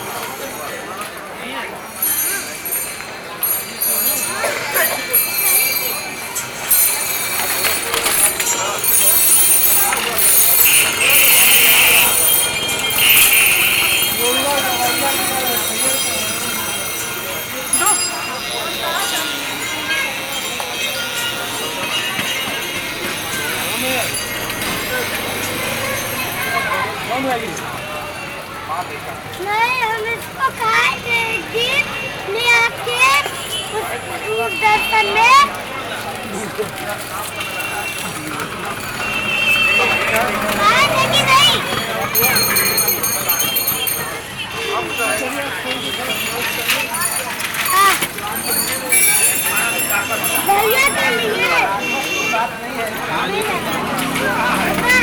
CK 39/73 Godowlia Road, Govindpura, Varanasi, Uttar Pradesh, Indien - varanasi, evening life
Varanasi, Uttar Pradesh, India, 17 February, 20:30